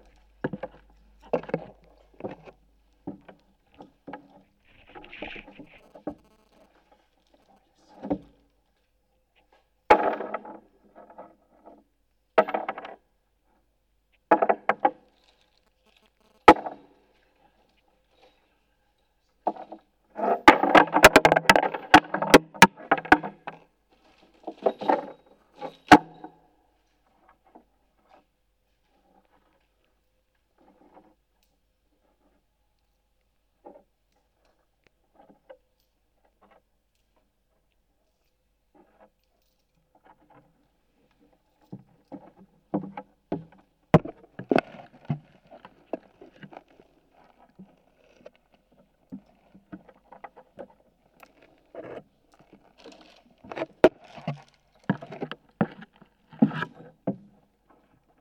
Escalera de madera del edificio del futuro Auditorio de la Fundación Cerezales. Micrófonos de contacto, pasos, objetos metálicos
13 June 2015, ~13:00